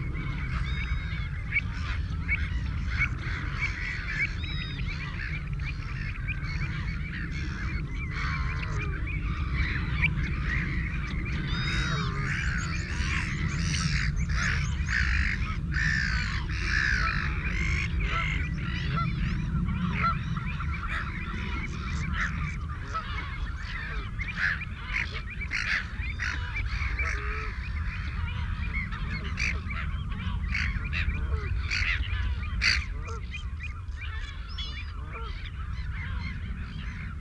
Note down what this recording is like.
Belper's Lagoon soundscape ... RSPB Havergate Island ... fixed parabolic to cassette recorder ... bird calls ... song from ... canada goose ... shelduck ... chiffchaff ... avocet ... lapwing ... oystercatcher ... redshank ... ringed plover ... black-headed gull ... herring gull ... back ground noise from planes ... distant ships ...